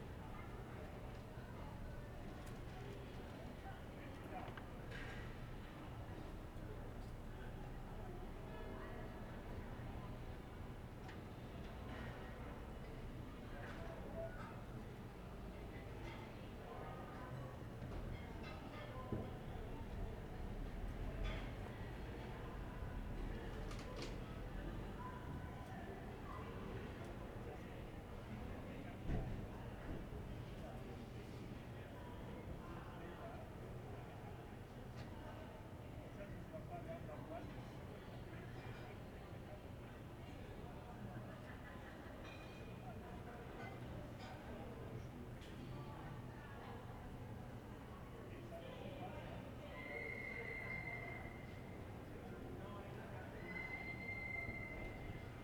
Ascolto il tuo cuore, città. I listen to your heart, city. Several chapters **SCROLL DOWN FOR ALL RECORDINGS** - Paysage sonore avec chien et petards aux temps du COVID19
"Paysage sonore avec chien et petards aux temps du COVID19" Soundscape
Friday March 20th 2020. Fixed position on an internal terrace at San Salvario district Turin, ten days after emergency disposition due to the epidemic of COVID19.
Start at 1:08 p.m. end at 1:40 p.m. duration of recording 30'31''